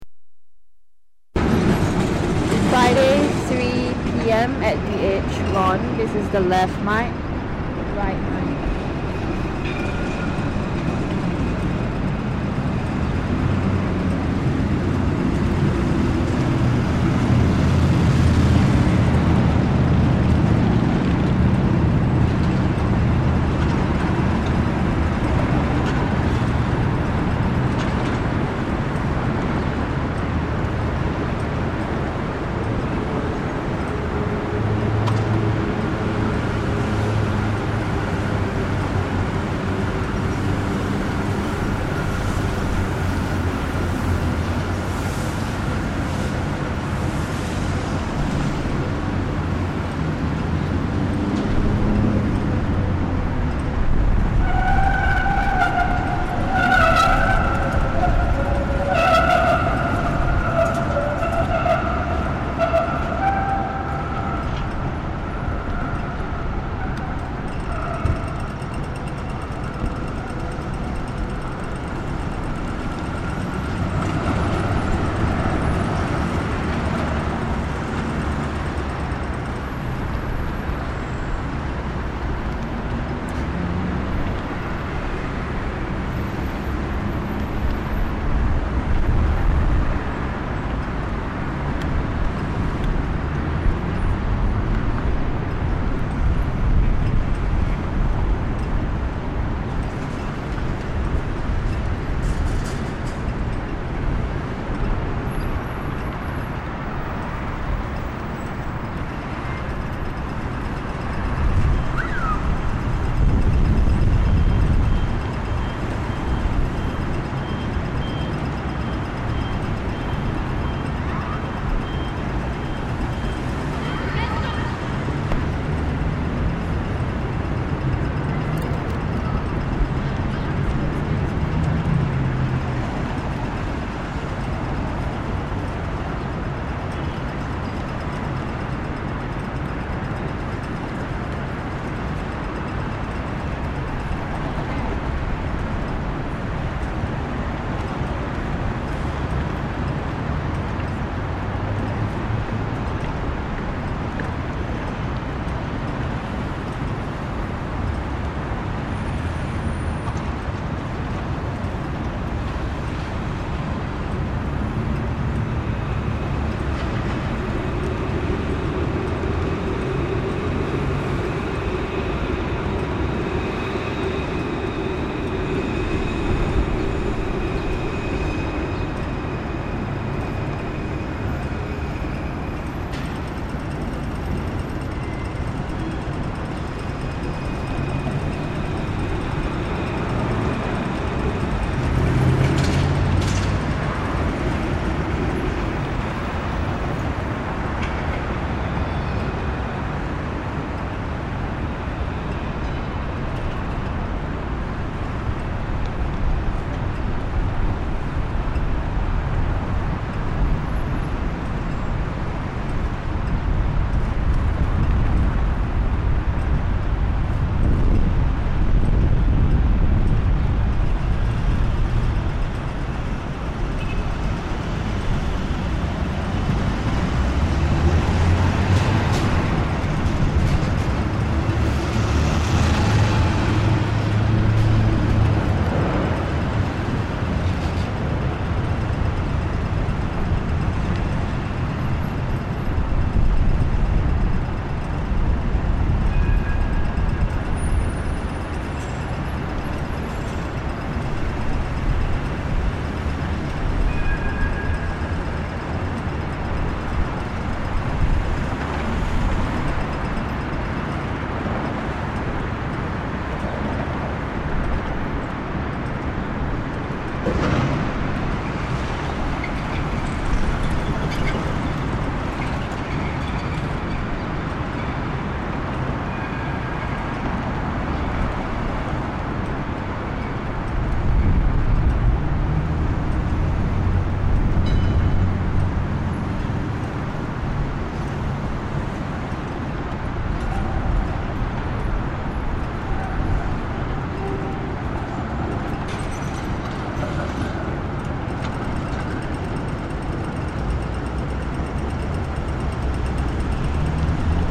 A busy intersection beside main transport hub
Docklands VIC, Australia